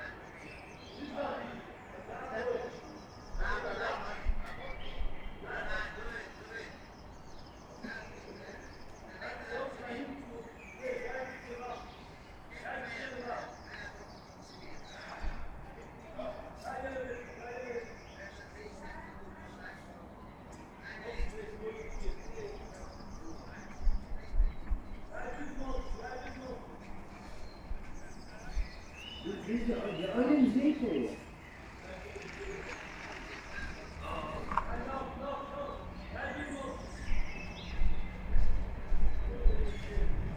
Lamorinierestraat, Antwerp, Belgium - Discussion on Pesach liturgy in Corona-crisis
Orthodox Jews discuss how to proceed with singing the liturgy during the Corona-crisis, with 10 men spread over gardens and balconies.
Vlaanderen, België - Belgique - Belgien, 9 April